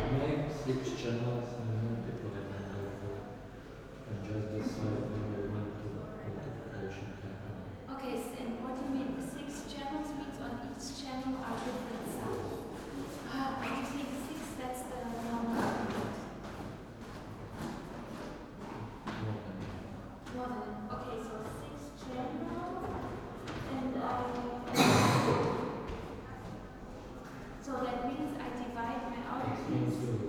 SEAM Werkstattstudio, concert pause, foyer ambience, students talking
(Sony PCM D50)